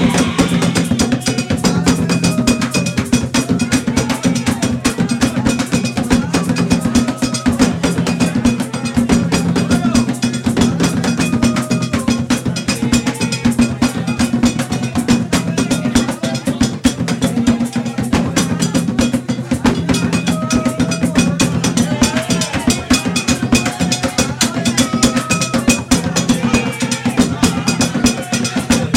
Santiago de Cuba, ritual de vodú, sacrificio de animales